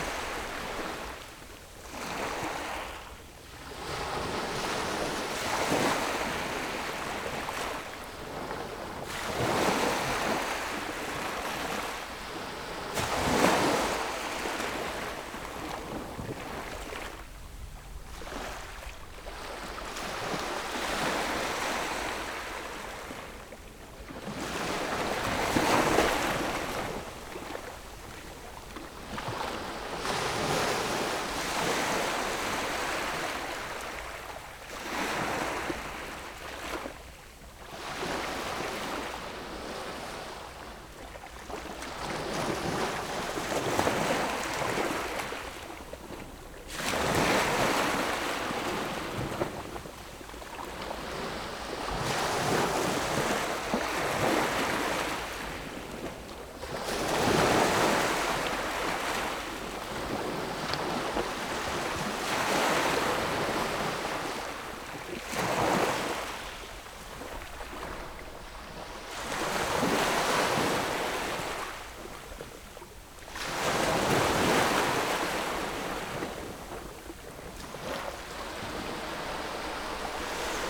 馬祖港, Nangan Township - At the beach
At the beach, Sound of the waves
Zoom H6 +Rode NT4
福建省, Mainland - Taiwan Border, October 2014